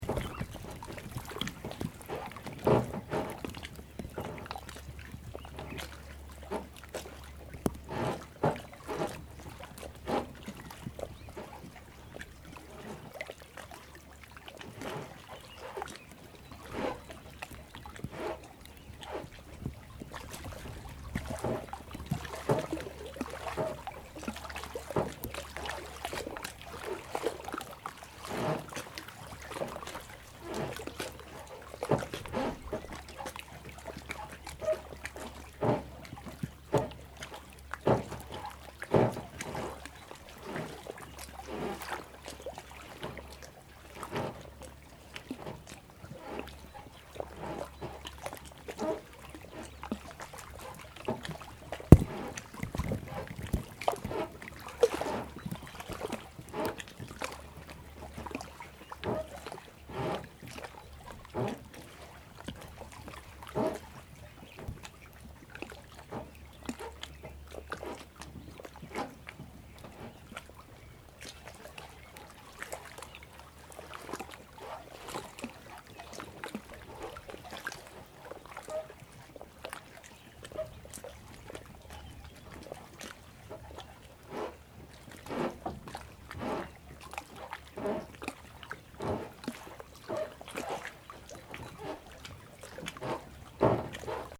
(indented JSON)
{
  "title": "Portugal - Coleja, Portugal",
  "date": "2014-02-20 11:00:00",
  "description": "Coleja, Portugal. Mapa Sonoro do Rio Douro. Douro River Sound Map.",
  "latitude": "41.14",
  "longitude": "-7.24",
  "altitude": "116",
  "timezone": "Europe/Lisbon"
}